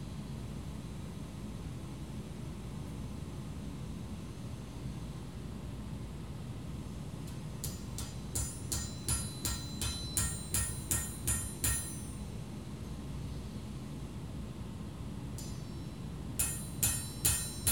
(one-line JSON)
{"title": "Mid-Town Belvedere, Baltimore, MD, USA - Metal Forging", "date": "2016-10-02 14:15:00", "description": "Recorded in the outdoor metalworking area behind Station building where blacksmithing was taking place. You can hear the tinging of hammer on anvil as well as the drone of various machinery outside the building.", "latitude": "39.31", "longitude": "-76.62", "altitude": "31", "timezone": "America/New_York"}